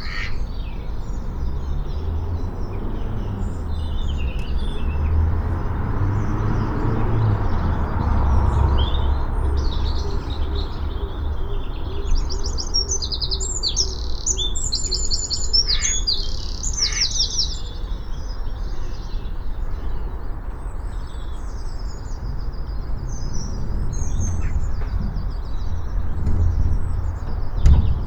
at My Garden Pond, Malvern, Worcestershire, UK - 6am. 24-3-22 Morning Sounds by the Garden Pond
The Mallard are visiting not nesting here this year. Humans pass by in cars and motorbikes and planes. An Airedale two houses away barks and the Mallard argue as usual.